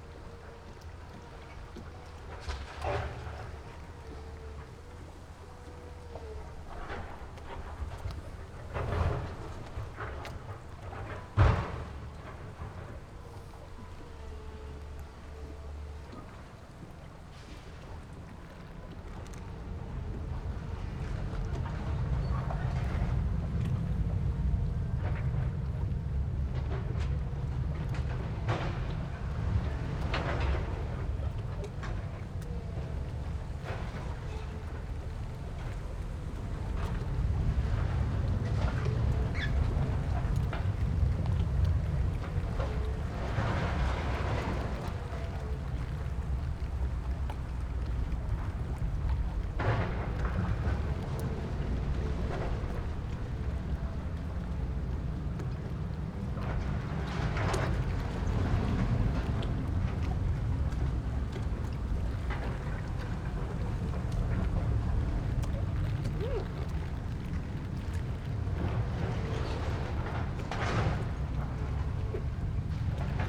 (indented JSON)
{
  "title": "鐵線里, Magong City - Small pier",
  "date": "2014-10-23 12:23:00",
  "description": "Small pier, The distance the sound of house demolition\nZoom H6 + Rode NT4",
  "latitude": "23.53",
  "longitude": "119.60",
  "altitude": "3",
  "timezone": "Asia/Taipei"
}